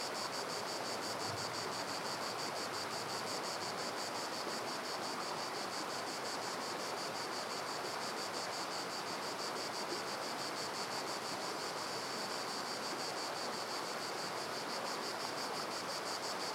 Civita, Province of Cosenza, Italy - Raganello gorges, Summer
Recorded with Zoom H2N, MS.